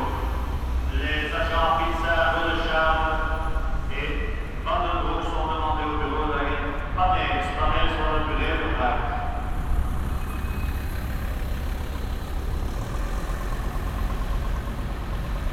Saint-Gilles, Belgium - Depot King
At the entrance of a depot for trams. Koningslaan.
Binaural.